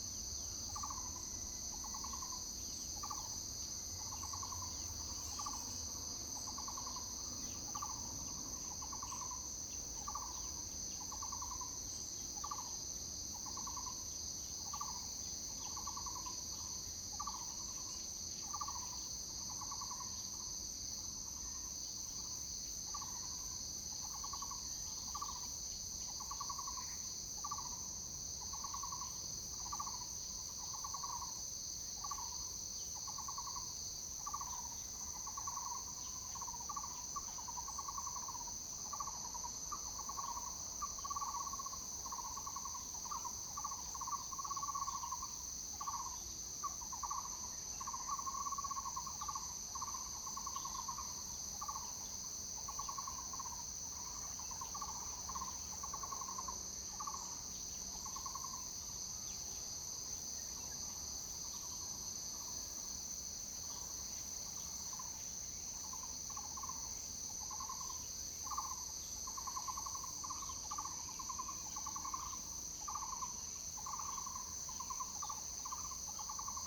橫山鄉沙坑農路, Hsinchu County - a variety of birds sound
Morning in the mountains, forest, a variety of birds sound, Zoom H2n MS+XY